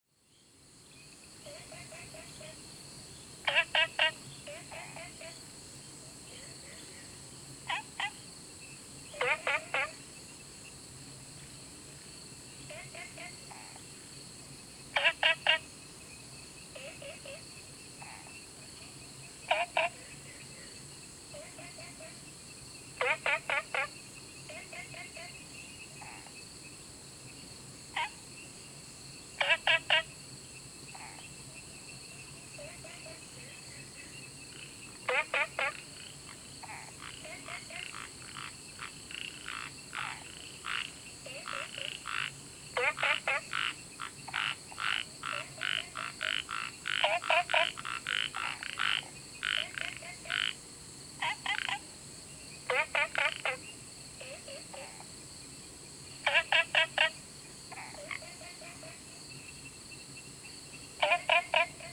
桃米巷, 桃米里, Puli Township - Frogs chirping
Sound of insects, Frogs chirping
Zoom H2n MS+XY
Puli Township, 桃米巷9-3號, 10 August